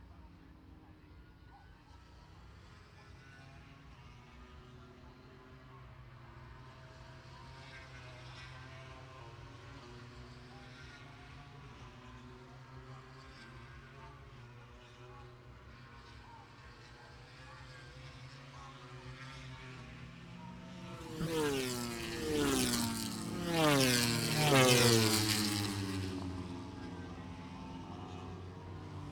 british motorcycle grand prix 2019 ... moto grand prix ... free practice two contd ... maggotts ... lavalier mics clipped to bag ...
Towcester, UK, 23 August, ~15:00